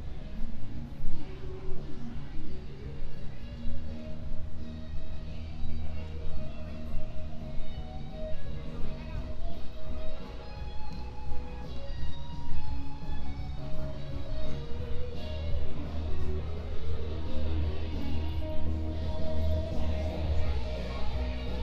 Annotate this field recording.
A Friday night walk around downtown Roanoke, Virginia. Binaural, Sony PCM-M10, MM BSM-8